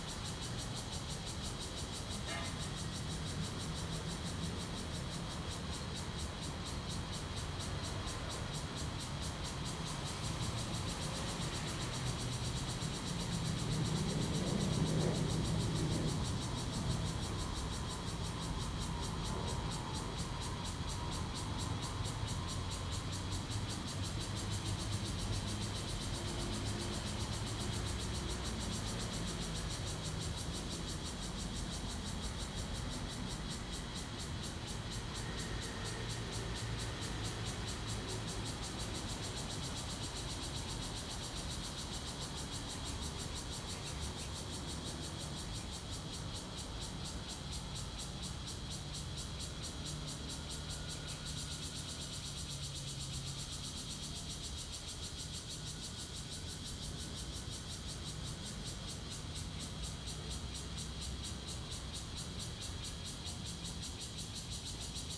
主權里, Hualien City - In large trees
Birdsong, Traffic Sound, Cicadas sound, Fighter flying through
Zoom H2n MS+XY
Hualien County, Taiwan, 29 August 2014